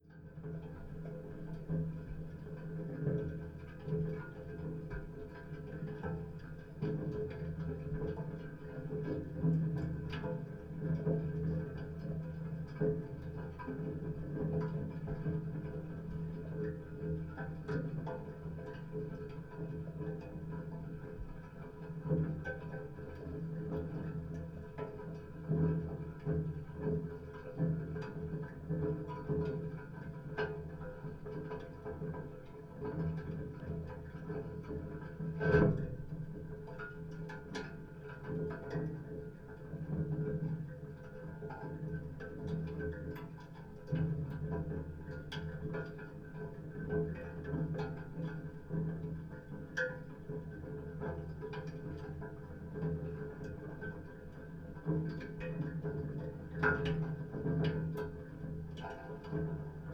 Netzow, Templin, Deutschland - iron furnace at work (contact)
(Sony PCM D50, DIY stereo contact mics)
Templin, Germany, 17 December 2016